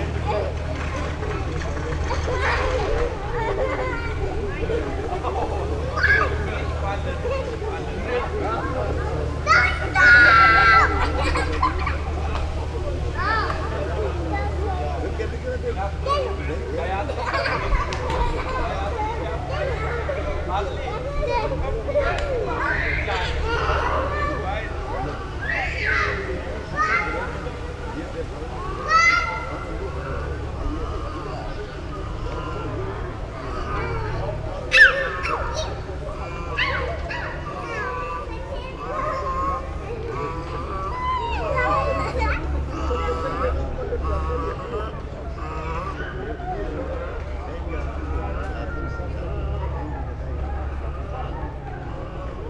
At Ernst Reuter Platz in Monheim am Rhein - the sound of the square near the small playground - children runnining around and making noises
soundmap nrw - topographic field recordings and social ambiences
Nordrhein-Westfalen, Deutschland